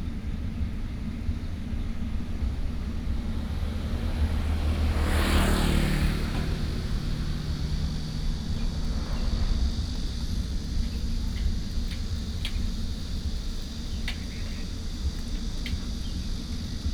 頭城鎮港口里, Yilan County - In the parking lot
Birdsong, Very hot weather, Traffic Sound
Toucheng Township, Yilan County, Taiwan